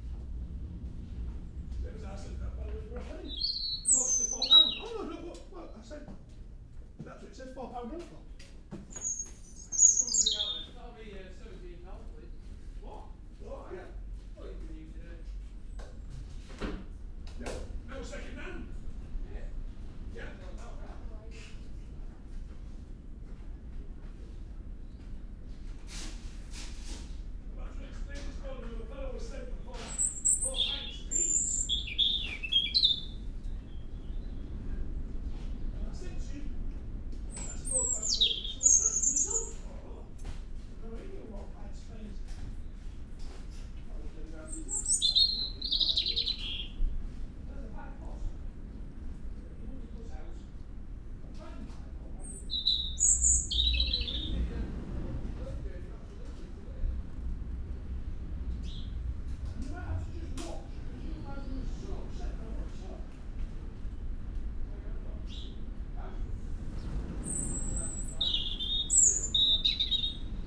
Reighton Nursery, Hunmanby Rd, Filey, United Kingdom - resident robin singing ...
resident robin singing ... Reighton Nurseries ... the birds is resident and sings in the enclosed area by the tills ... it is not the only one ... lavalier mics clipped to bag ... background noise and voices ... the bird can negotiate the sliding doors ...